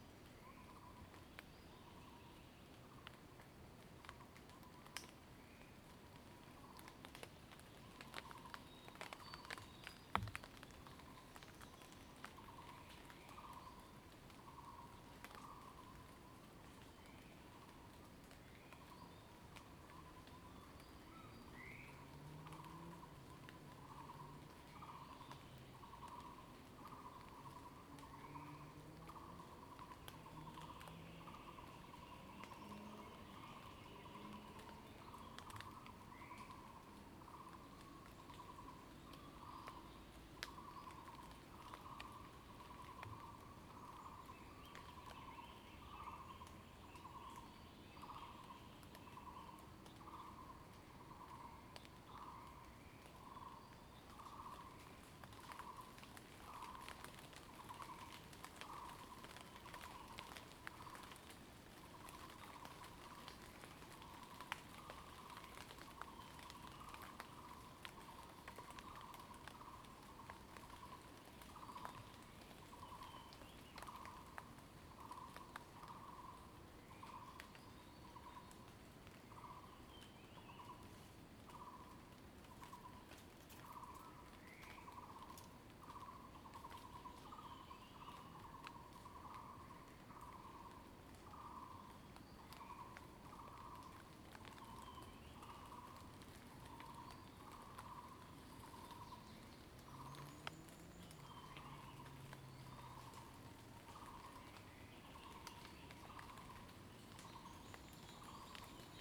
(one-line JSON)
{"title": "水上, 桃米里, Puli Township - in the woods", "date": "2016-04-19 06:25:00", "description": "morning, in the woods, Bird sounds, Frogs chirping, Water droplets fall foliage\nZoom H2n MS+XY", "latitude": "23.94", "longitude": "120.92", "altitude": "518", "timezone": "Asia/Taipei"}